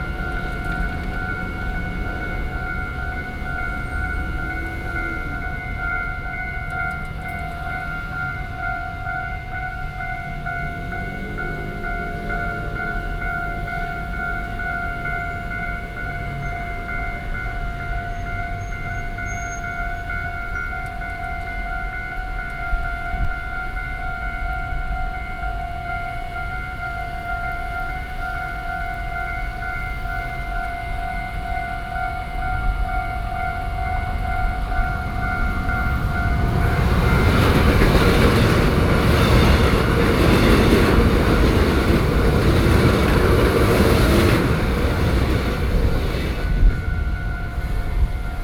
Jung Li City, Taoyuan - Level crossing
Level crossing, Train traveling through, Sony PCM D50 + Soundman OKM II